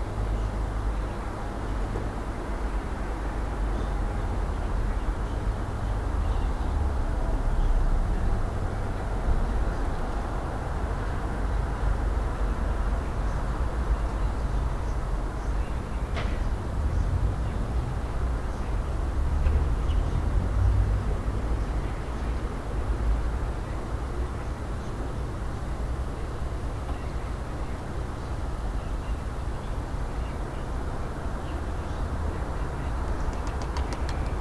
Ein Tag an meinem Fenster - 2020-03-31
Landkreis Göppingen, Baden-Württemberg, Deutschland, March 2020